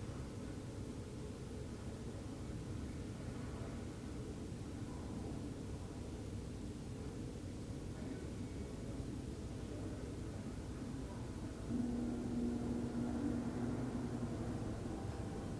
This is a recording of a busy night at a regular family household.